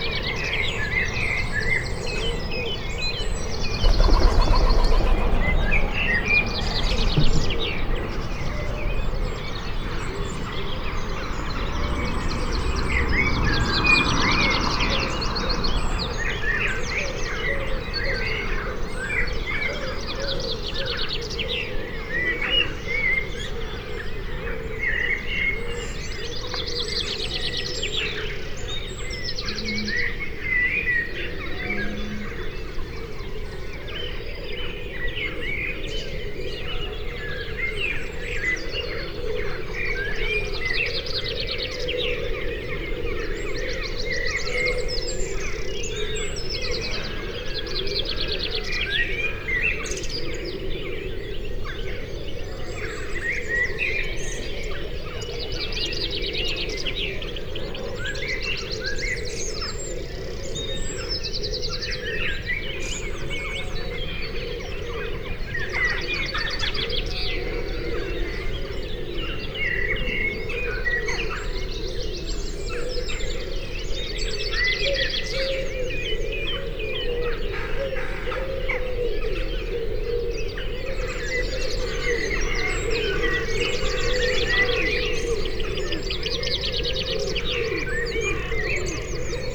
Again recorded from 4am but this time the microphone rig is on the other side of the roof facing west towards the Malvern Hills a few hundred yards away and rising to around 1000 feet at this point. The roof has another feature facing the right hand mic which seems to produce an effect visible on the computer. At 9'47" my neighbour about 30 yards away across the road comes out to collect his milk and says "Morning Birdies" which stops all the most local bird calls for a time. Around 23'00 I think that is the Muntjac trying to make itself heard.This is another experiment with overnight recordings of longer duration.
MixPre 6 II with 2 x Sennheiser MKH 8020s in a home made wind baffle.